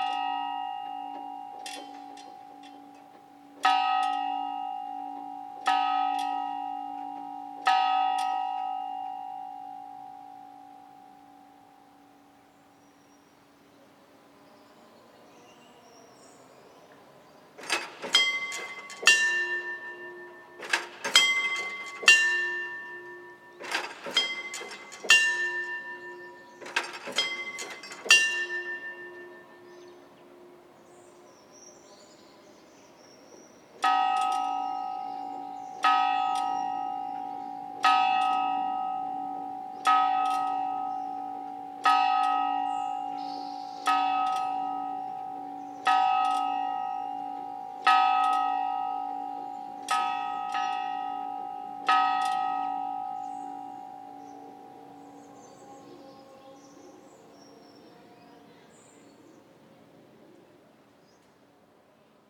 Asnières-sur-Oise, France - Abbaye de Royaumont - clocher
Abbaye de Royaumont
Clocher : 7h - 8h et 10h
Île-de-France, France métropolitaine, France, 13 October 2017